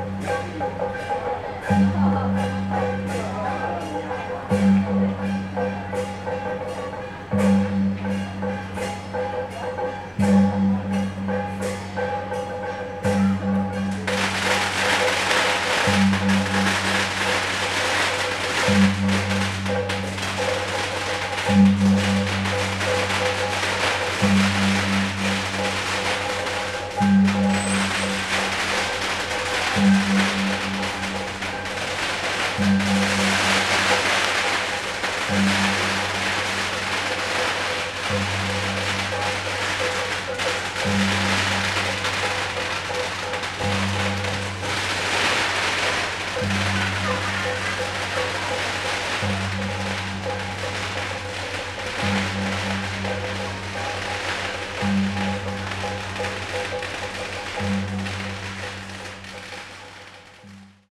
Ln., Tonghua St., Da’an Dist. - Traditional temple festivals
in a small alley, temple festivals, The sound of firecrackers and fireworks
Sony Hi-MD MZ-RH1 + Sony ECM-MS907
Taipei City, Taiwan, 13 February 2012